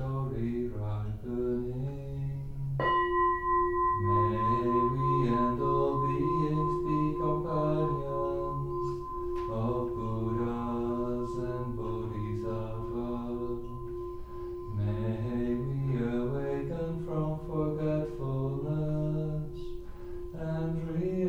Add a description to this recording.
Part two of the formal tea meditation. After a short period of sitting meditation, Brother Phap Xa leads an incense offering, touching the earth (prostrations) and is joined in the refrains by participants. The participants bow to each other as a mark of gratitude repeating inwardly the line: A lotus to you, Buddha to be. Brother Phap Lich then prepares the tea and participants pass the cups around the group, bowing before receiving the tea. (Sennheiser 8020s either side of a Jecklin Disk on SD MixPre6)